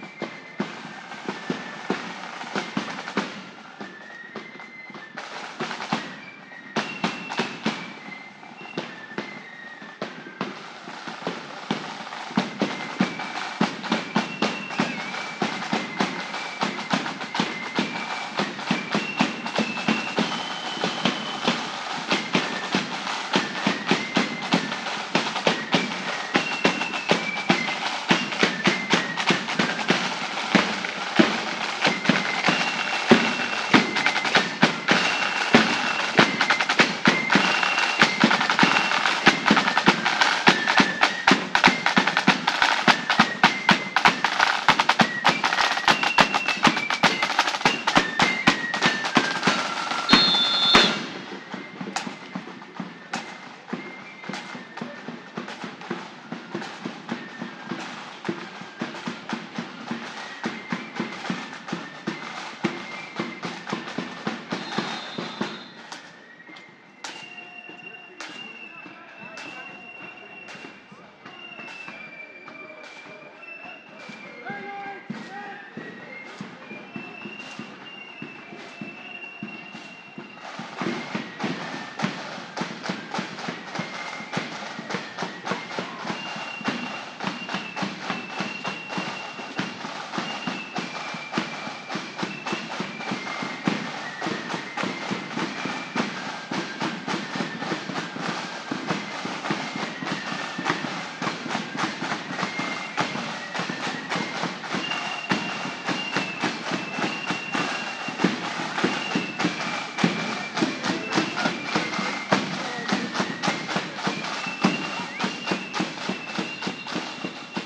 College Gardens, Belfast, UK - Orange Day-Exit Strategies Summer 2021
Extended recording of the Orange Day marching bands on Lisburn Road. There are groups of people, either alone, coupled, or with their families attending the different bands marching through. On the side, some children play their own drum kits to mimic the marching bands. The road is closed off to allow safe viewing and attendance of the marches. There are instances of whistles to direct or instruct a specific marching band. It is an interesting sequence that seems never-ending since there “seems” to be an endless number of marching bands for that specific hour that they are marching through the closed road.